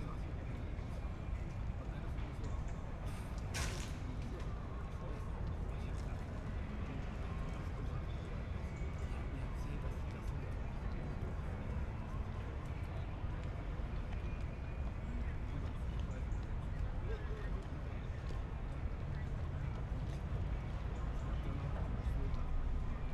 Wiesenufer, Alt-Treptow, Berlin, Deutschland - evening ambience at the canal
sitting at the Landwehrkanal, listening to the air, Friday evening. A tourist boat is passing by, sounds from a distance.
(SD702, NT1 ORTF)
Berlin, Germany, 8 August 2014